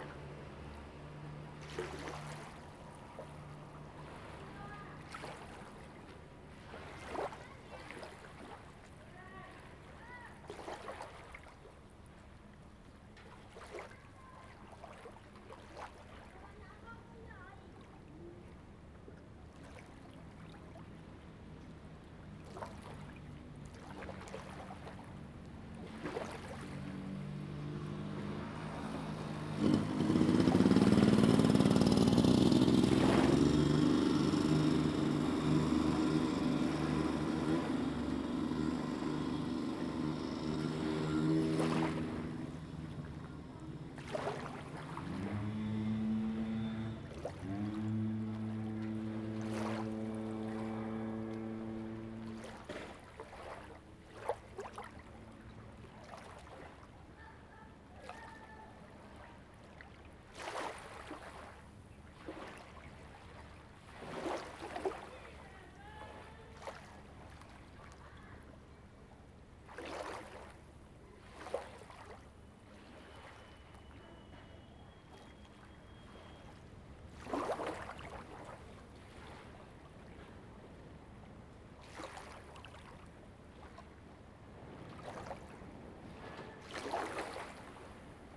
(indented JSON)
{
  "title": "海子口漁港, Hsiao Liouciou Island - Waves and tides",
  "date": "2014-11-01 14:04:00",
  "description": "Waves and tides\nZoom H2n MS +XY",
  "latitude": "22.32",
  "longitude": "120.36",
  "altitude": "8",
  "timezone": "Asia/Taipei"
}